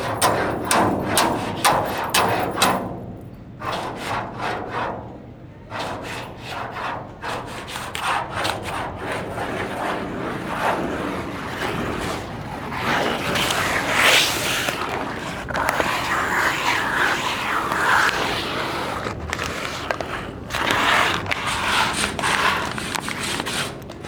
Calle Beatas, Malaga, Andalucia, Spain - Interaccion Plancha de metal WLD2016
Interaccion en el espacio público por el Grupo de Activacion Sonora en el dia mundial de la escucha WLD2016